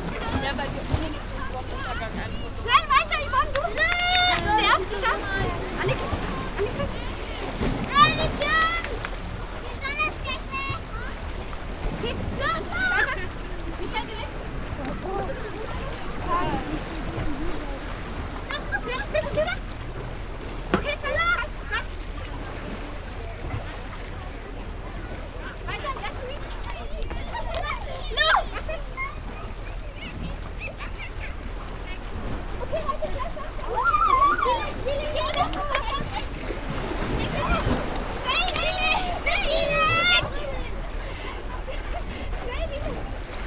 lighthouse, Novigrad, Croatia - eavesdropping: sunset
german speaking young ladies - try to catch photo with RA
7 September 2012